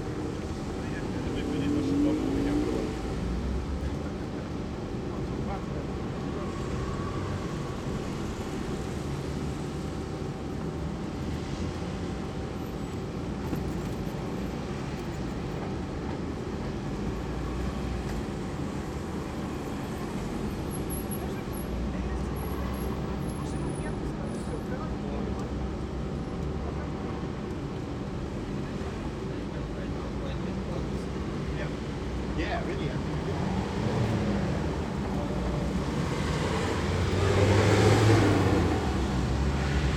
walk Podil, Kiew, Ukraine - atmo walkway